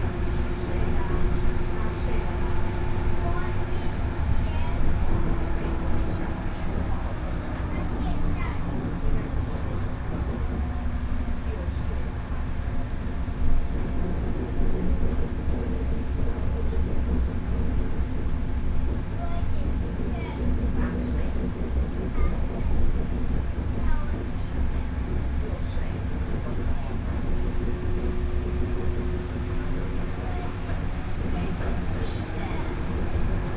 Neihu Line (Taipei Metro) - Taipei Metro
Neihu Line (Taipei Metro) from Xihu Station to Dazhi Station, Sony PCM D50 + Soundman OKM II